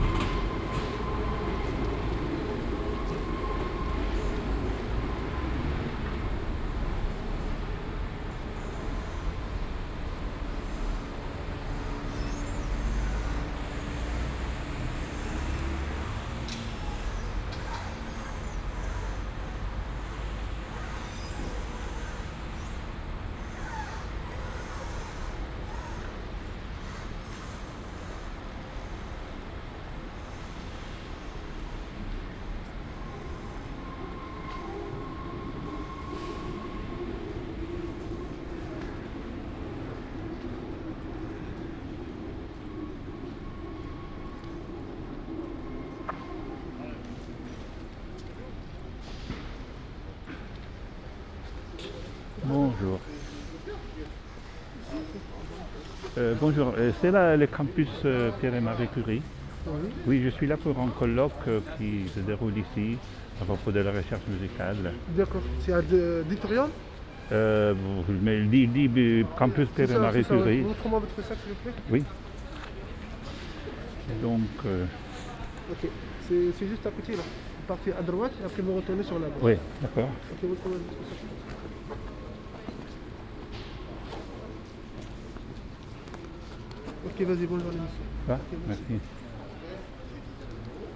"Friday morning metro and soundwalk in Paris in the time of COVID19": Soundwalk
Friday, October 16th 2020: Paris is scarlett zone for COVID-19 pandemic.
One way trip walking from Airbnb flat to the metro 7 from Stalingrad to Jussieu and short walking to Sorbonne Campus for Rencontres nationales recherches en musique
Start at 8:46 p.m. end at 10:33 p.m. duration 46’37”
As binaural recording is suggested headphones listening.
Path is associated with synchronized GPS track recorded in the (kmz, kml, gpx) files downloadable here:
For same set of recording go to:
Loc=51267